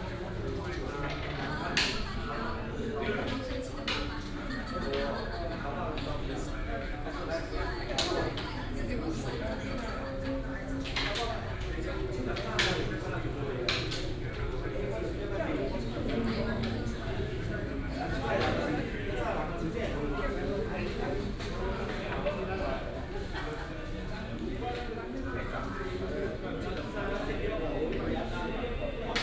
羅東鎮老人會, Yilan County - elderly are playing chess
Elderly welfare gathering hall, A group of elderly are playing chess, Sing karaoke, Binaural recordings, Sony PCM D100+ Soundman OKM II